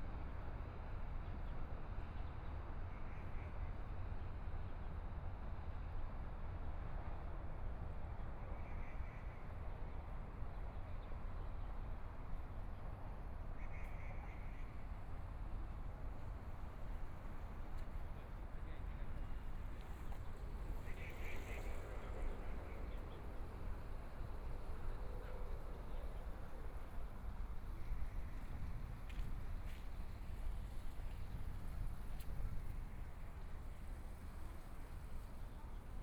Xinsheng Park - Taipei EXPO Park - walking in the Park
in the Park, Environmental sounds, Birds singing, Traffic Sound, Aircraft flying through, Tourist, Clammy cloudy, Binaural recordings, Zoom H4n+ Soundman OKM II